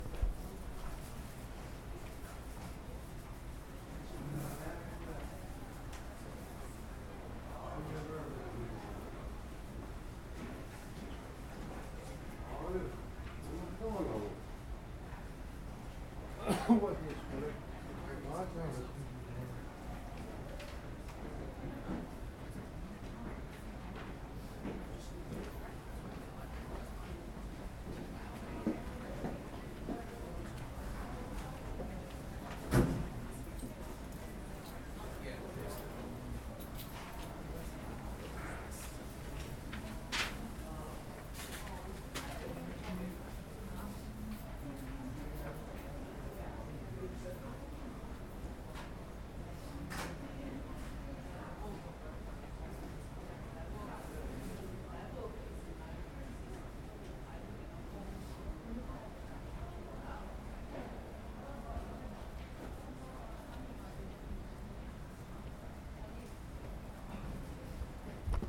Flushing, Queens, NY, USA - Queens Library Romance Section
Queens Library (main branch) 2nd Floor Romance Section
March 2017